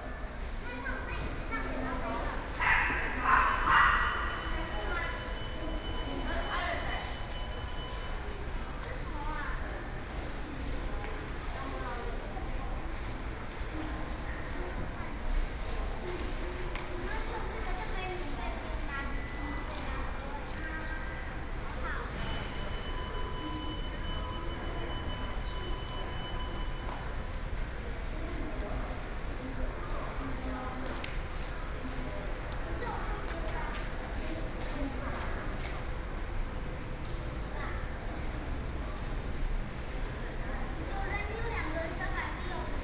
ChiayiStation, THSR - Station hall
in the Station hall, Sony PCM D50 + Soundman OKM II